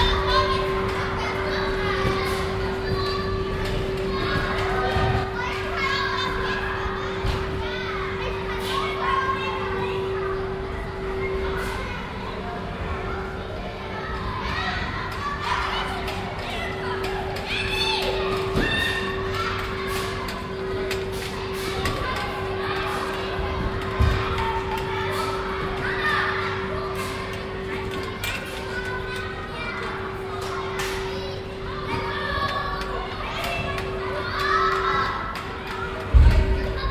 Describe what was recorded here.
indoor freizeitaktivitäten, project: : res´onanzen - neanderland - social ambiences/ listen to the people - in & outdoor nearfield recordings